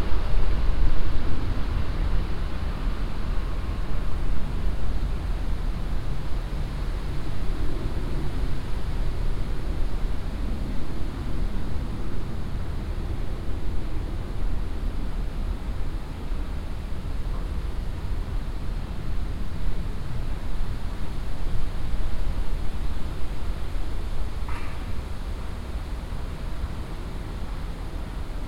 Heemstedestraat, Amsterdam, Netherlands - (293) Metro Platform above ground
Binaural recording of a metro platform above ground.
Recorded with Soundman OKM + Sony D100
Noord-Holland, Nederland, 15 September 2017, 16:44